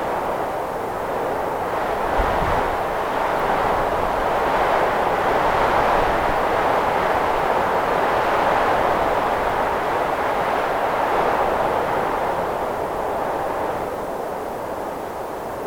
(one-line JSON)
{"title": "Hures-la-Parade, France - Blowy winter", "date": "2015-03-01 12:50:00", "description": "Wind is gelid and powerful, during a winterly break.", "latitude": "44.26", "longitude": "3.45", "altitude": "999", "timezone": "Europe/Paris"}